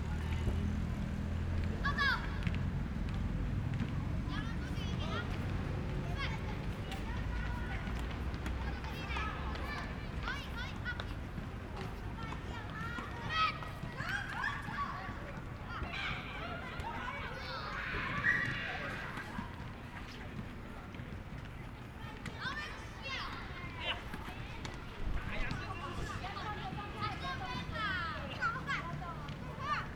In the park people do all kinds of ball games, Children's game sound, Tennis sounds, Students are playing basketball, Traffic Sound, Zoom H6 M/S, +Rode Nt4